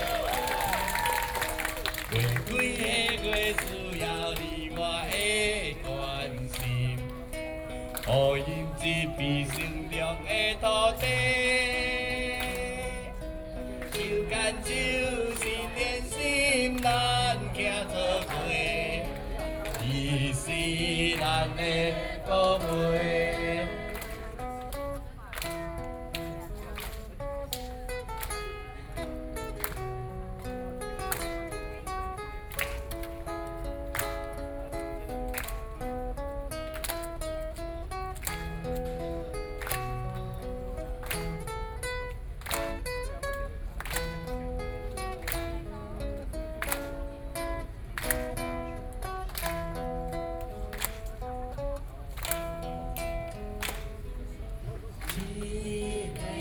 National Chiang Kai-shek Memorial Hall, Taipei - Cheer
A long-time opponent of nuclear energy Taiwanese folk singer, Sony PCM D50 + Soundman OKM II
中正區 (Zhongzheng), 台北市 (Taipei City), 中華民國, 14 June 2013, 21:15